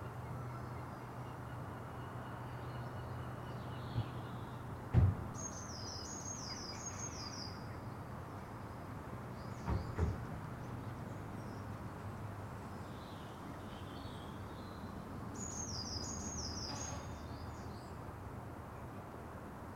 Contención Island Day 13 inner west - Walking to the sounds of Contención Island Day 13 Sunday January 17th
The Poplars
Stand in a corner
in front of a purple-doored garage
Two cars appear
to park along the alley
Herring gulls cry